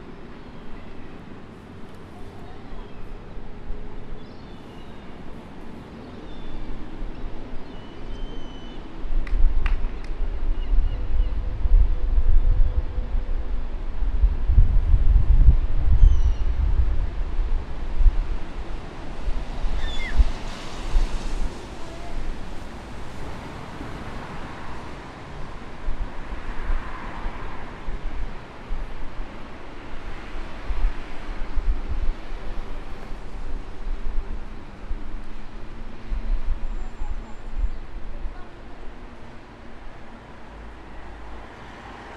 bilbao marzana bridge
Some gulls, vehicles and people near the Ria of Bilbao, right next to the pier of Marzana